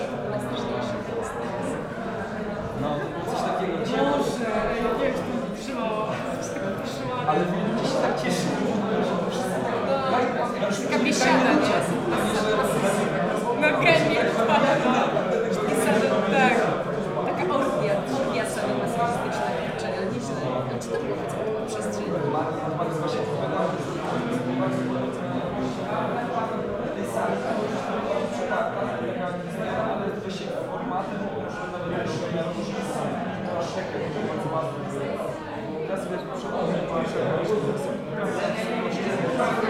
people talking after a meeting in a specious room in Spot. in one of the groups Chris Watson is explaining how a high frequency detector works. (sony d50)